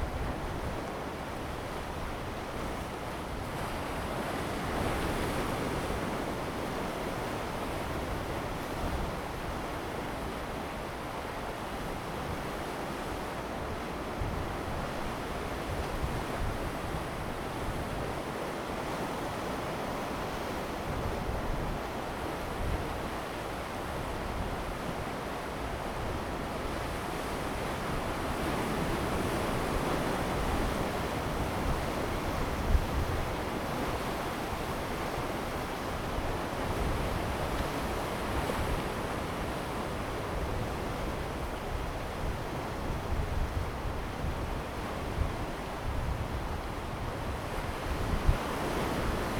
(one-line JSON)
{"title": "興海漁港, 滿州鄉 Manzhou Township - On Haiti", "date": "2018-04-23 09:03:00", "description": "On Haiti, birds sound, wind, the sea washes the shore\nZoom H2n MS+XY", "latitude": "21.98", "longitude": "120.84", "altitude": "5", "timezone": "Asia/Taipei"}